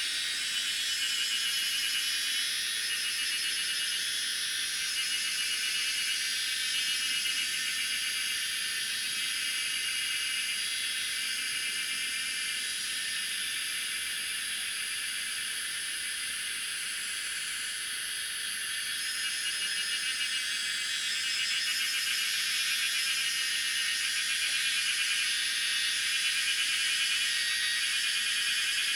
Shuishang Ln., 桃米里, 南投縣 - Cicadas
Faced woods, Cicadas called
Zoom H2n MS+XY